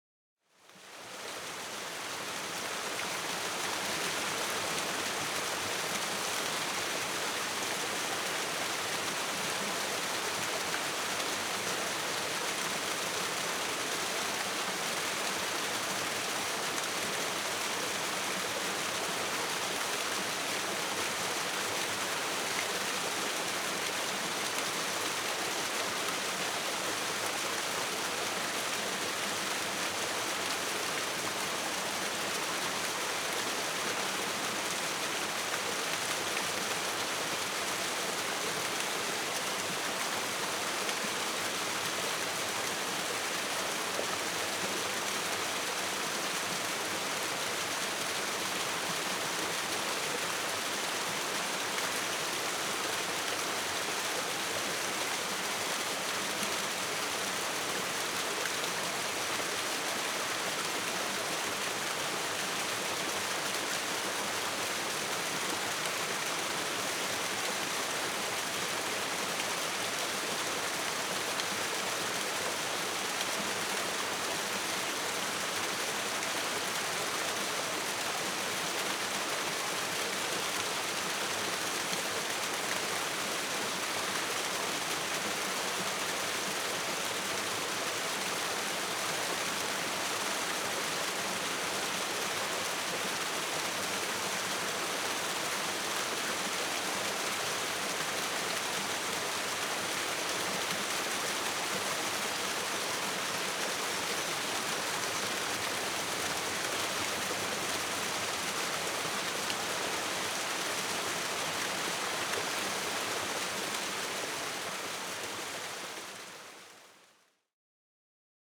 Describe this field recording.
The stream goes over a small waterfall